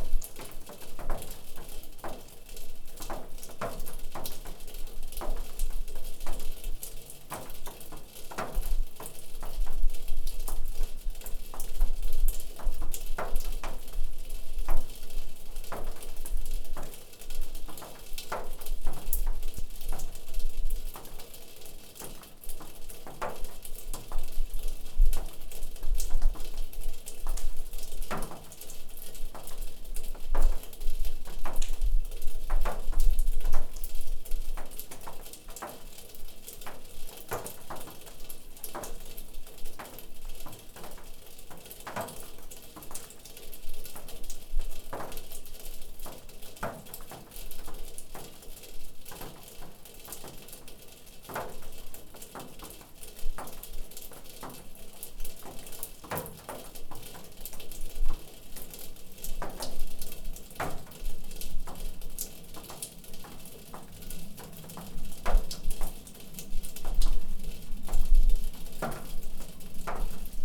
Innstraße, Innsbruck, Österreich - Tropfkonzert Winter/Schnee
The snow is melting from the rooftop and celebrates itself in music (Zoom H5-XYH-5)
Innsbruck, Austria, 6 January 2019